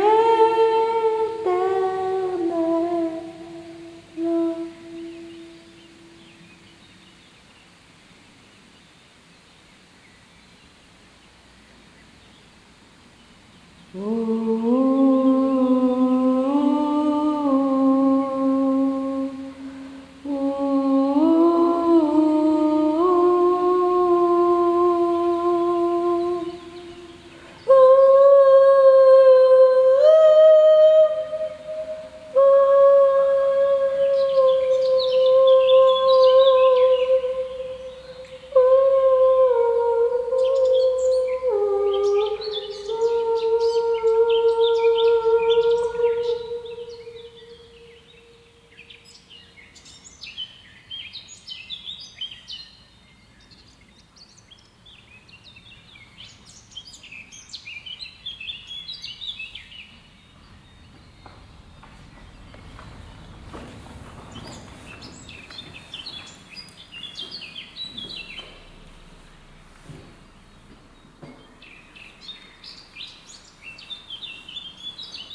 Bridge, Saint-Hubert, Belgique - Reverberation under the bridge.
Reading an info leaflet about the hydroelectric power plant, voice impro by Alice Just.
Birds, train passing above.
Tech Note : SP-TFB-2 binaural microphones → Olympus LS5, listen with headphones.
Luxembourg, Wallonie, België / Belgique / Belgien